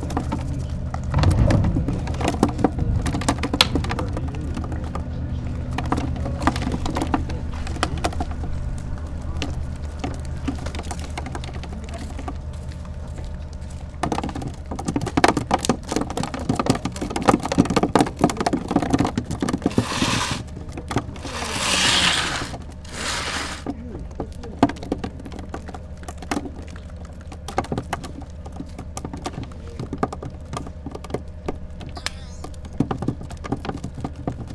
Sidi Ifni, Port, Fishing Port 1
Africa, Sidi Ifni, port, fish